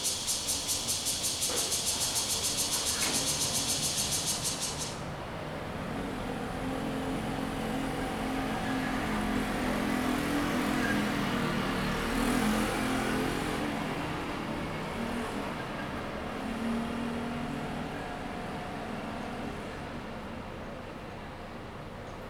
大仁街, Tamsui District - Cicadas and traffic sound
Cicadas sound and traffic sound
Zoom H2n MS+XY
Tamsui District, New Taipei City, Taiwan, 14 June 2015